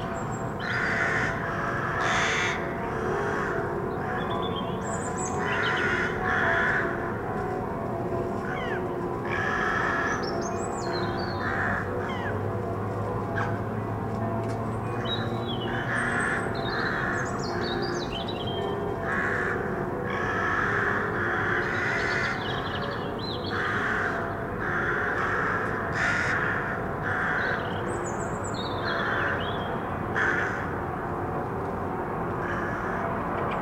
Ringing bells and crows concert during Easter period.
From my window confinement time.
AT4021 in ORTF, Sound device Mixpre6 no processing.